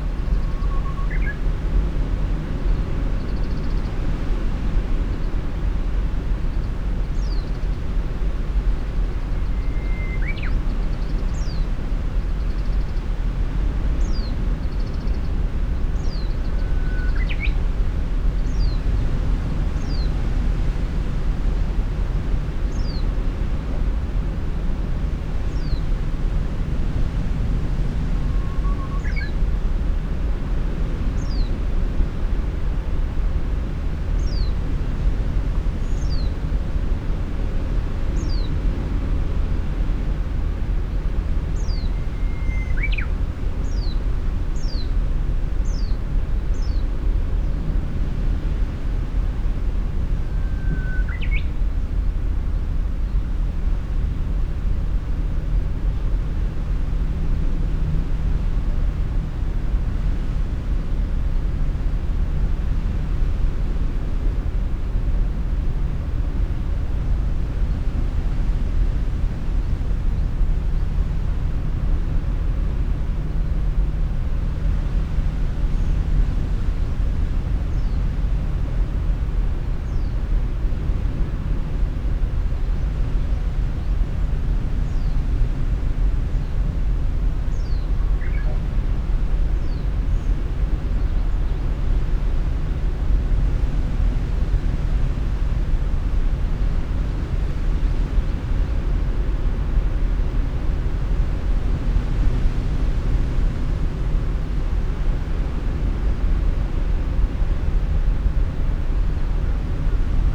호도 small island, small birds, strong swell, heavy shipping
호도_small island, small birds, strong swell, heavy shipping...